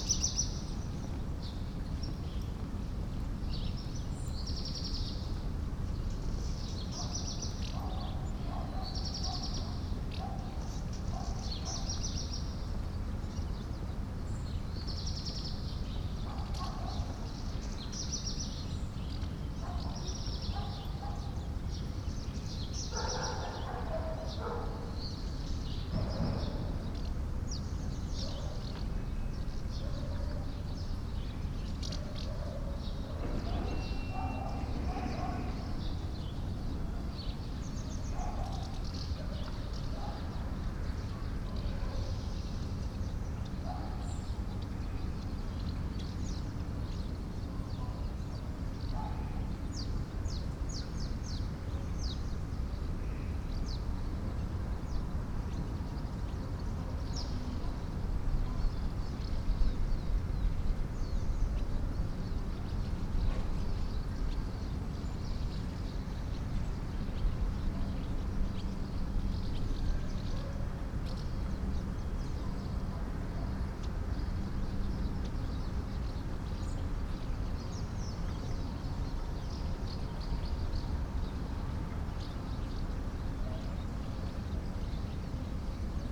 Mariánské Radčice, Tschechische Republik - Martins and Black Redstarts in the Morning
Sunday morning in front of the monestary.
Mariánské Radčice, Czech Republic